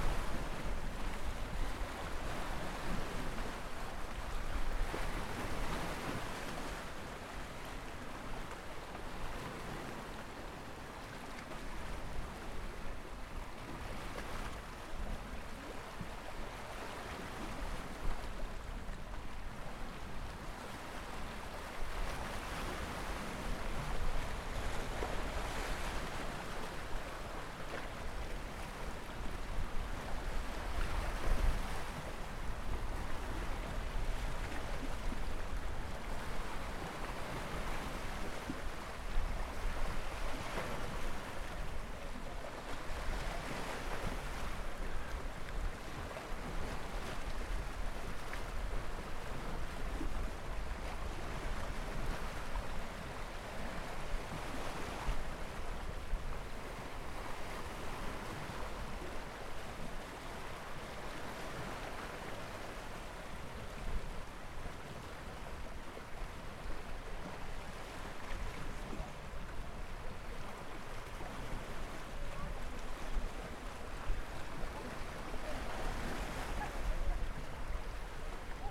Quand la vague devient danseuse et qu'elle transporte dans ses mouvements les rêves d'un voyageur